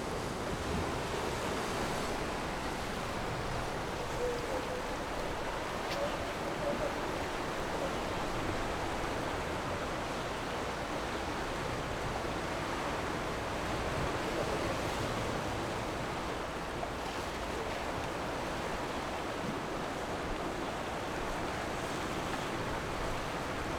鐵堡, Nangan Township - On the rocky coast
Sound wave, On the rocky coast
Zoom H6 +Rode NT4
連江縣, 福建省, Mainland - Taiwan Border, October 2014